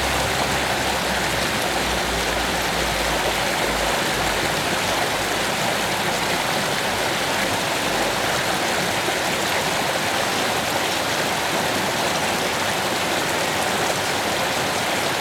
Bastendorf, Tandel, Luxemburg - Bastendorf, Am Haff, small stream Lann
Im Dorfzentrum auf einer kleinen Straße, die parallel zur Hauptdurchfahrtstsraße verläuft. Der Klang des kleinen, lebendigen Flusses Lann, der quer durch den Ort fliesst.
In the center of the town on a small street, parallel to the main street. The sound of the small, vivid stream Lann that flows through the town. In the background passing by traffic from the main street.